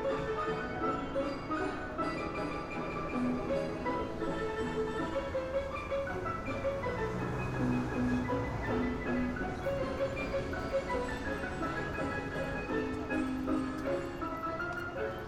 Sortida Metro Entença
A man with a music box.
Barcelona, Spain, 5 March 2011, ~14:00